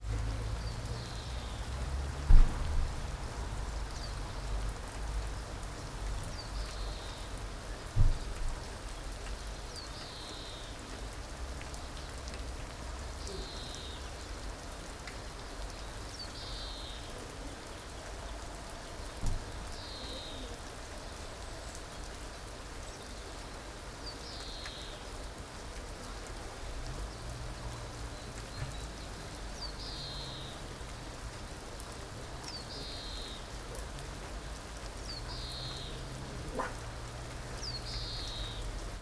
all the mornings of the ... - mar 10 2013 sun
Maribor, Slovenia, 10 March 2013